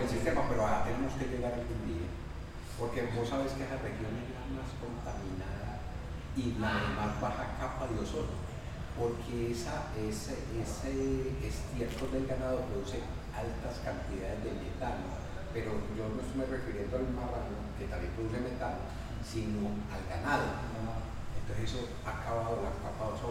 Cra., Medellín, Belén, Medellín, Antioquia, Colombia - Convesaciones inseperadas
Este pasillo misterioso se encuentra mojado porque está cerca a una piscina, de allí se pueden
analizar las pisadas de aquellos que han osado en adentrarse en el recóndito y frío pasillo a las
4:00 pm